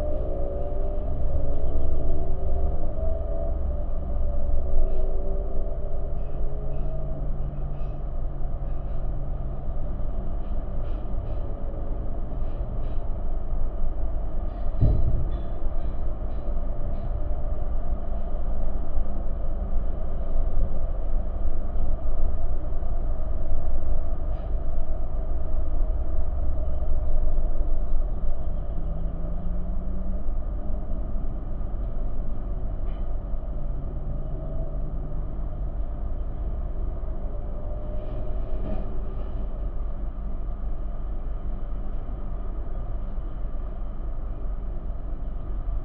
Vidukle, Lithuania. contact mic on window
contact microphones on motel window